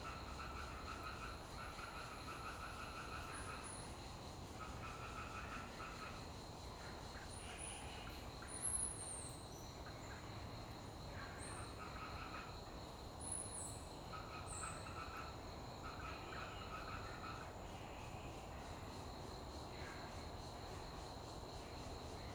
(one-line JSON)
{"title": "富陽自然生態公園, Da'an District, Taipei City - In the woods", "date": "2015-07-05 19:07:00", "description": "In the woods, Bird calls, Frog sound\nZoom H2n MS+XY", "latitude": "25.02", "longitude": "121.56", "altitude": "33", "timezone": "Asia/Taipei"}